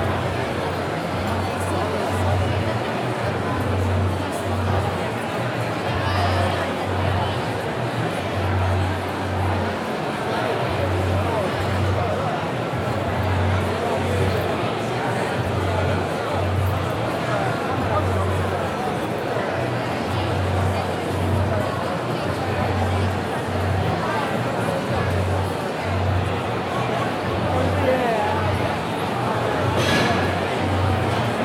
Piazza delle Vettovaglie, Pisa PI, Italien - Piazza delle Vettovaglie (22:00)
by night on the piazza delle vettovaglie. people having drinks, laughing and chatting till the last bar closes. water buckets being filled and emptied. glass shattering. air humming.
Pisa PI, Italy, 6 October